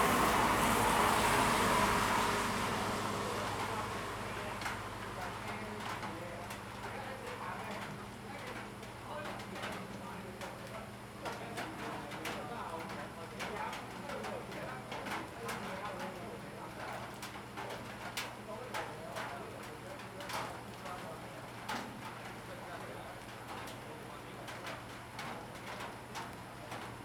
月眉里, Guanshan Township - Rain and Traffic Sound
Rain and Traffic Sound, In the roadside temple
Zoom H2n MS +XY
Taitung County, Taiwan, 7 September 2014